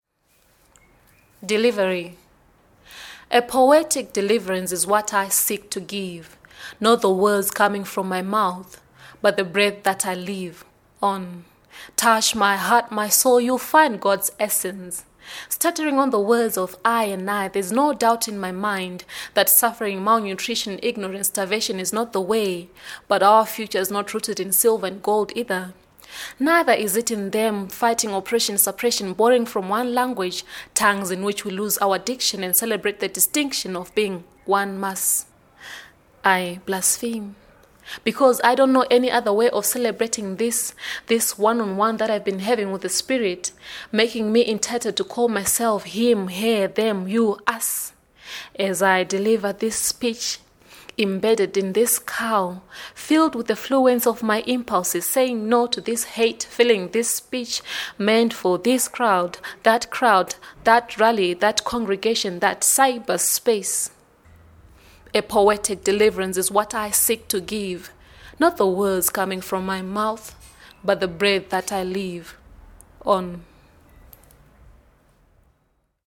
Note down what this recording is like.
Zaza Muchemwa, “Delivery…”, more of Zaza's poems at: